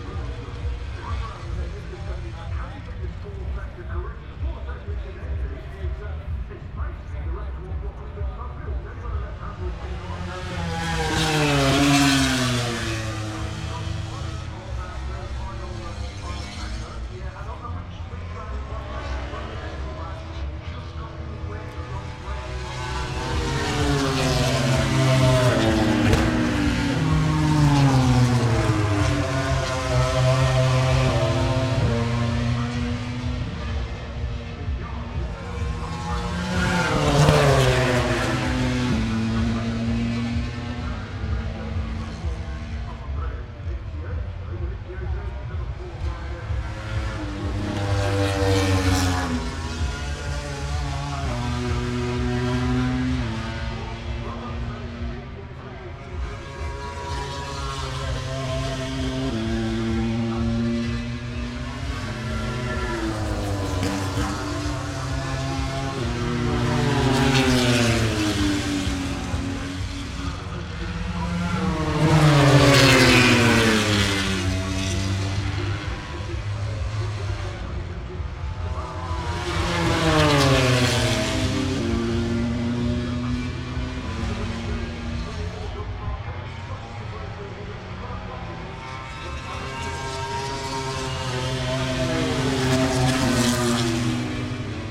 Silverstone Circuit, Towcester, UK - british motorcycle grand prix 2019 ... moto grand prix ... fp1 ...
british motorcycle grand prix 2019 ... moto grand prix ... free practice one ... some commentary ... lavalier mics clipped to bag ... background noise ... the disco in the entertainment zone ...
East Midlands, England, UK, 23 August 2019, 09:55